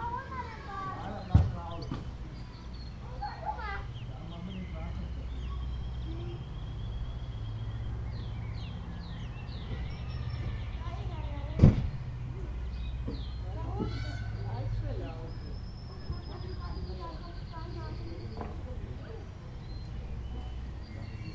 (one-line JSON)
{"title": "Beselich Niedertiefenbach - Garten / garden", "date": "2009-05-21 17:00:00", "description": "21.05.2009 Beselich Niedertiefenbach, Feiertag, Gärten zwischen Häusern\nholiday, gardens between houses", "latitude": "50.44", "longitude": "8.14", "altitude": "208", "timezone": "Europe/Berlin"}